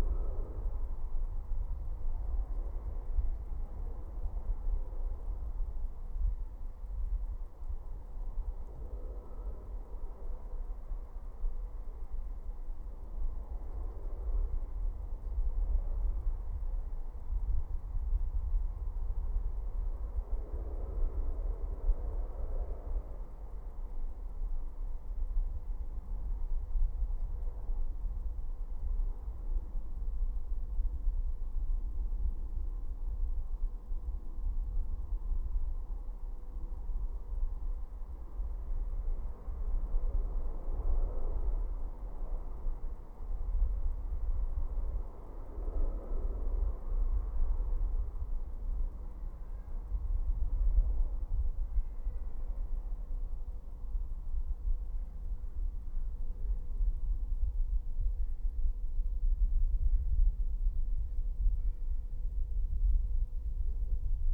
Belvederis, Lithuania, in the rain pipe
recorder placed in the long rainpipe at the wall of abandoned Belvederis mansion